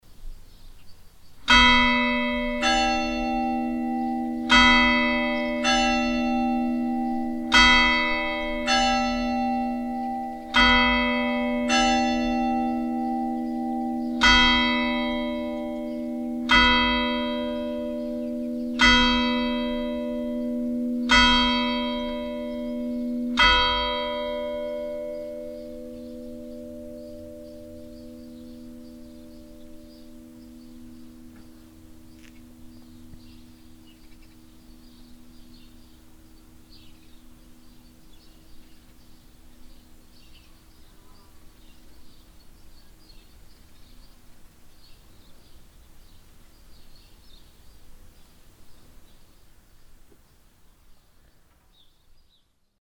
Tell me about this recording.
Standing on the cementery at the LEglise Sainte-Croix church. The sound of the 6pm bells on a warm and mellow windy summer evening. Lieler, Kirche, Glocken, Auf einem Friedhof bei der Kirche Hl.-Kreuz. Das Geräusch der 6-Uhr-Glocken an einem warmen und milden Sommerabend. Lieler, église, cloches, Dans le cimetière de l’église Sainte-Croix. Le carillon de 18h00, un doux soir d’été chaud mais venteux. Project - Klangraum Our - topographic field recordings, sound objects and social ambiences